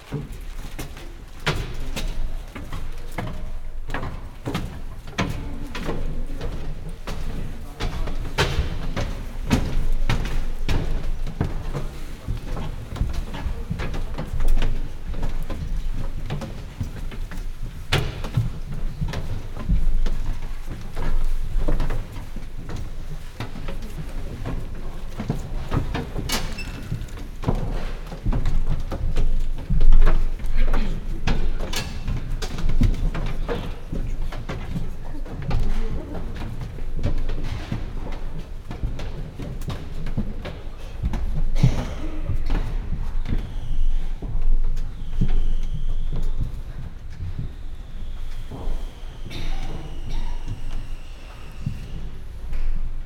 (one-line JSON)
{"title": "Sanok, orthodox church", "description": "the choir singers walking up the wooden stairs to the balkony before the ceremony", "latitude": "49.56", "longitude": "22.21", "altitude": "314", "timezone": "Europe/Berlin"}